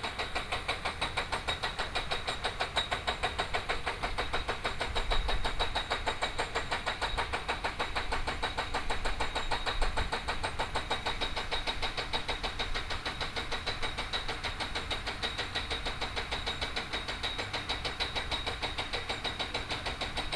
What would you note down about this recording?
Construction noise, Binaural recordings, Zoom H4n+ Soundman OKM II ( SoundMap2014016 -20)